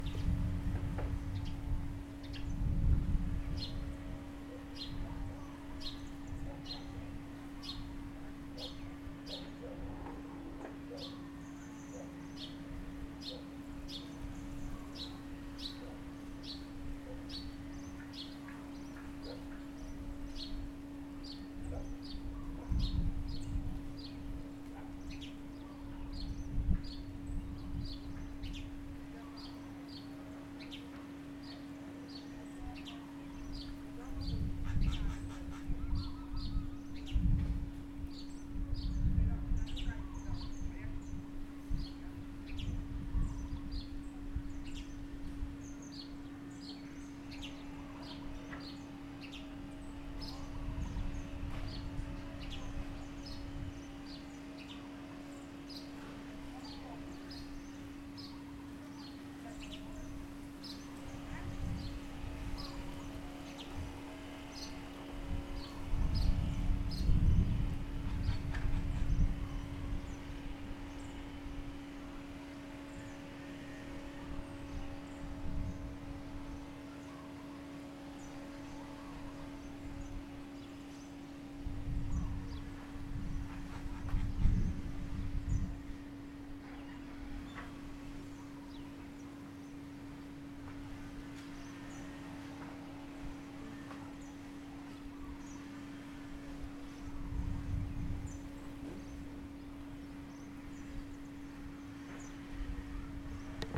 Moufia, Sainte-Clotilde, Reunion - Balcony daytime ambience
Field recording using stereo ZOOM H4N. Light wind, birds singing, palm tree leaves, dogs barking, people talking, electric saw.
30 March, 10:19